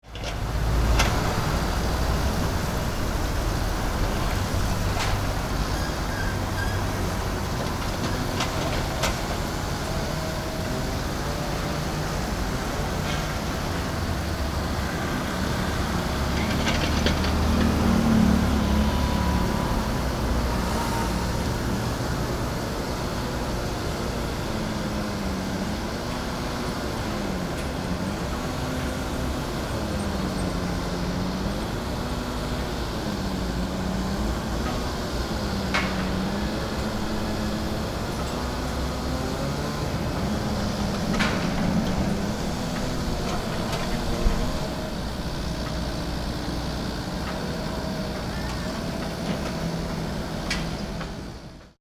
Cianjhen District, Kaohsiung - Construction Noise
Construction Noise, Sony PCM D50